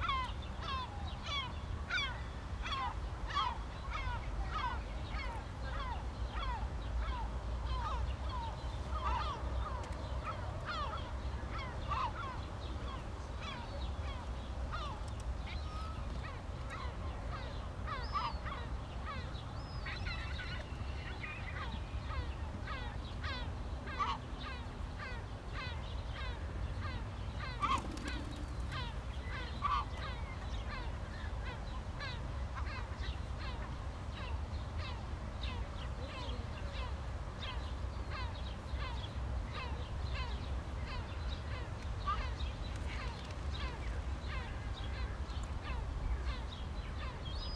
pond in the middle oismae, the utopia of an ideal city